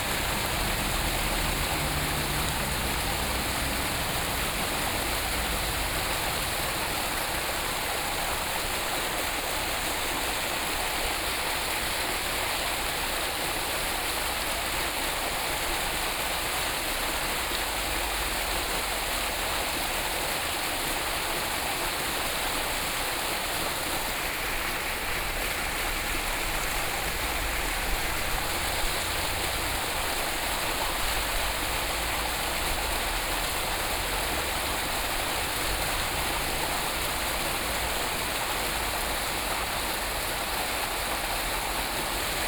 Yuanshan Park, 員山鄉 - The sound of water
in the Park, The sound of water
Sony PCM D50+ Soundman OKM II